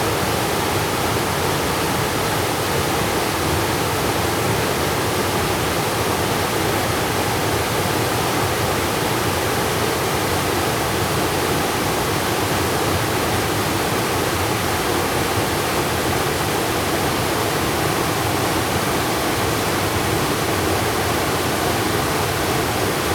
夢谷瀑布, 仁愛鄉南豐村, Nantou County - waterfalls
Waterfalls
Zoom H2n MS+XY +Sptial Audio
Nantou County, Taiwan, 13 December 2016